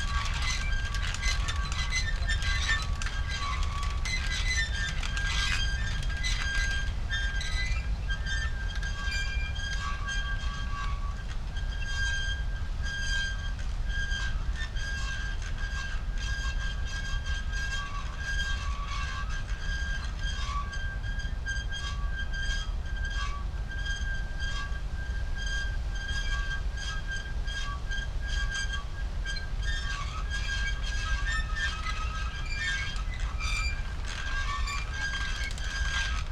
Gleisdreieck, Kreuzberg, Berlin - wind wheels, city soundscape
park behind technical museum, Berlin. ensemble of squeaking wind wheels in the trees, sound of passing-by trains, distant city sounds
(Sony PCM D50, DIY Primo EM172 array)